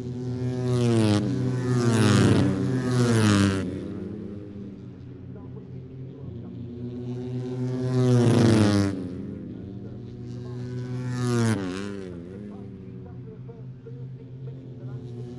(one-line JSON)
{"title": "Silverstone Circuit, Towcester, UK - british motorcycle grand prix 2021 ... moto three ...", "date": "2021-08-27 09:00:00", "description": "moto three free practice one ... maggotts ... olympus ls 14 integral mics ...", "latitude": "52.07", "longitude": "-1.01", "altitude": "158", "timezone": "Europe/London"}